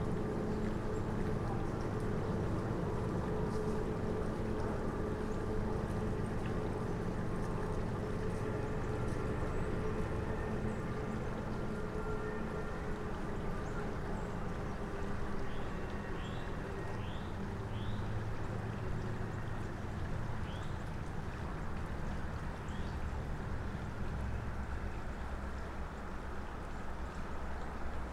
Wetlands area and elevated boardwalk, Heritage Park Trail, Smyrna, GA, USA - Next to the creek
A recording from a small observation platform overlooking Nickajack Creek. The mics were tied around a wooden support facing towards the creek. You can hear the faint sound of water as people walk the trail. A child moves in close to the recording rig, but thankfully nothing is disturbed.
[Tascam DR-100mkiii w/ Primo EM-272 omni mics]
Georgia, United States, 29 December 2020, 16:20